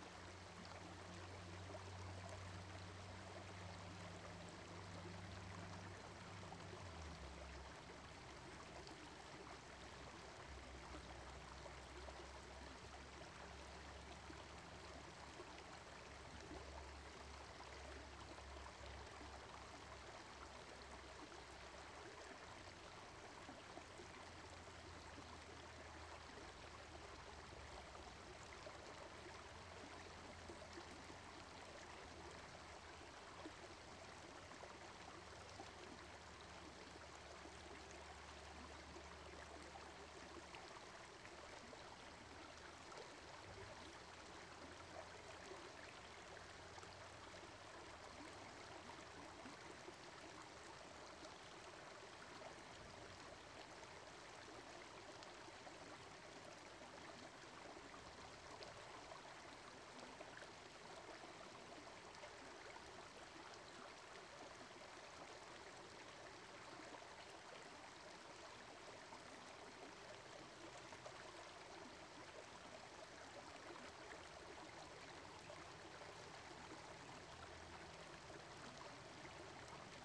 Leona Heights park creek, towards the end of trail ---- Oakland
flat part towards the end of trail in Leona canyon, water just slowly floats down, before it gains the speed
Alameda County, California, United States of America